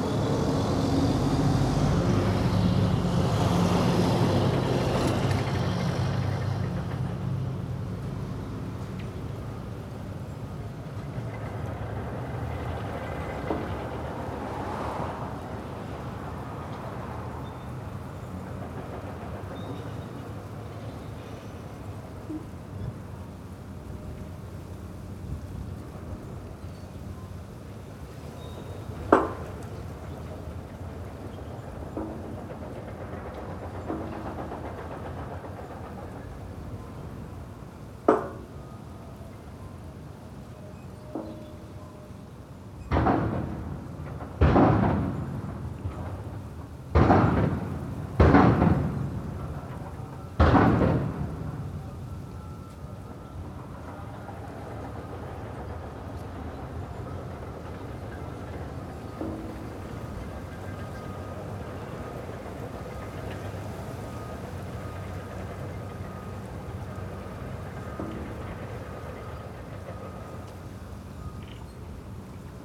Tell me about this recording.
Sounds of a pier being demolished near the entrance to the Terminal Island Prison and Deportation Center. Charlie Manson was held here for a brief period of time before being sent to Folsom State Prison.